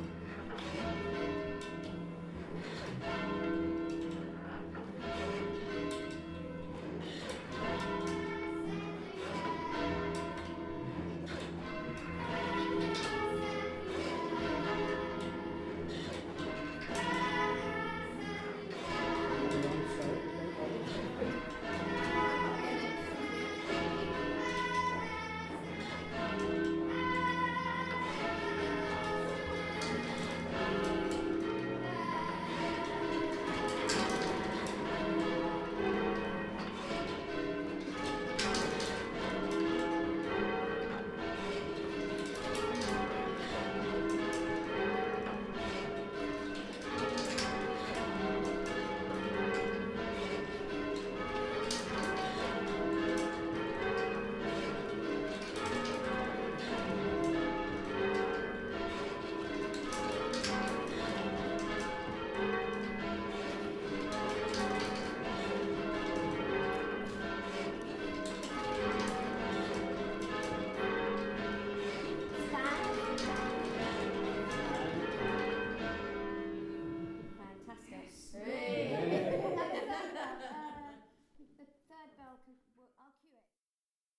Powerstock, Dorset, UK - Powerstock Ringers rehearsal
Sammy Hurden and Andy Baker with the Powerstock Ringers in rehearsal